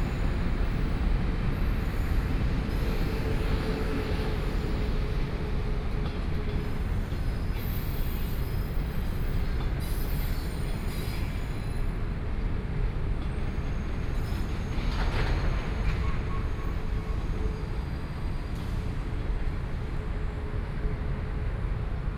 Hsinchu Station - Traffic noise
Train traveling through, From the underpass towards the oppositeSony, PCM D50 + Soundman OKM II
Hsinchu City, Taiwan, September 2013